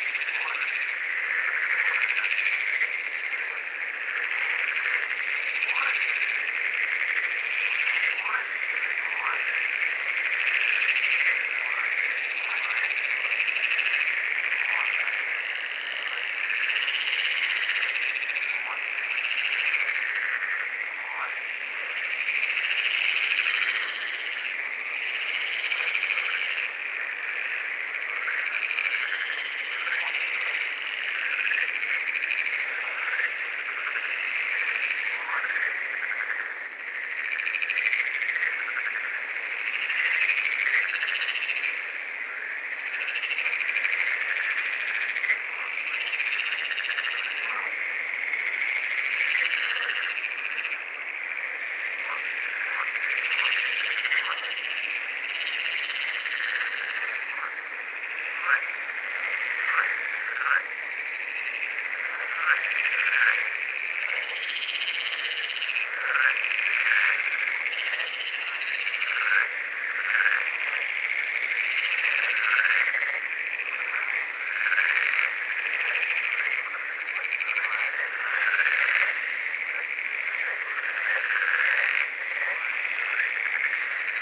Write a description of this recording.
I went to watch/listen bats over local swamp, but there was another grand chorus - frogs.